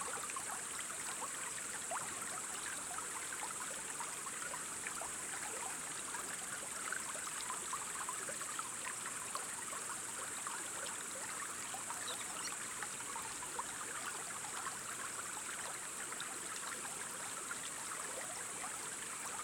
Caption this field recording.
small creek entering the baltic sea at the seashore